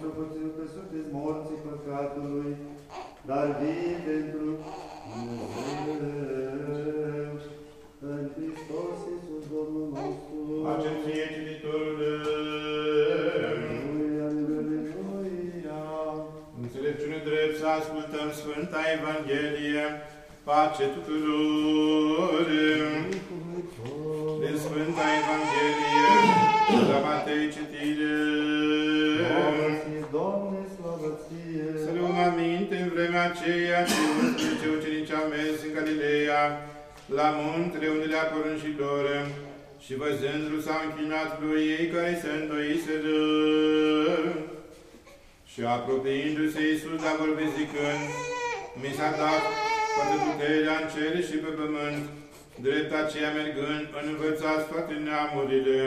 Botez, Largu
Botez=Baptism by the Rumanian Orthodox
Romania